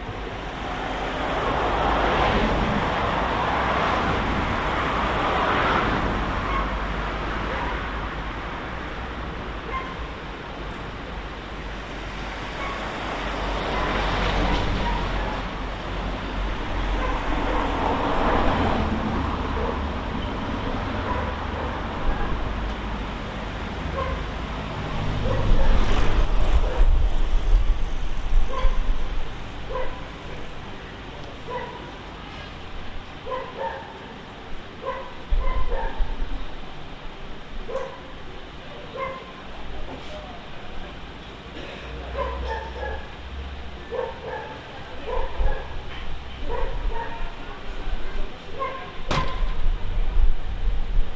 normal life frontier (A.Mainenti)